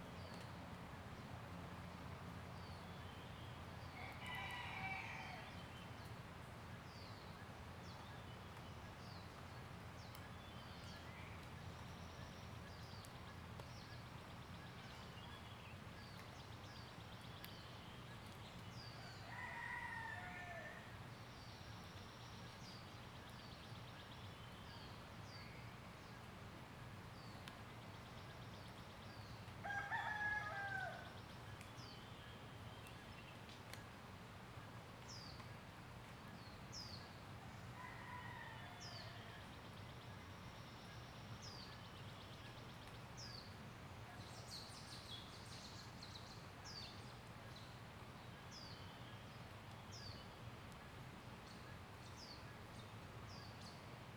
埔里鎮水上巷2號, Puli Township - Chicken sounds
in the morning, Chicken sounds
Zoom H2n MS+XY